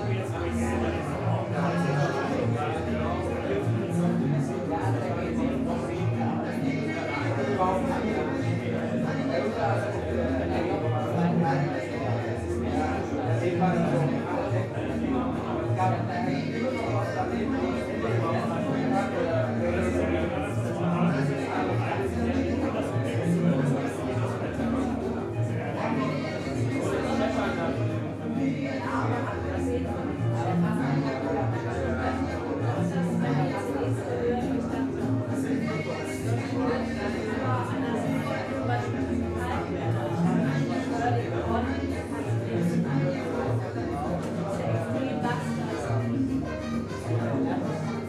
the city, the country & me: february 11, 2010
Berlin, Deutschland, 11 February 2011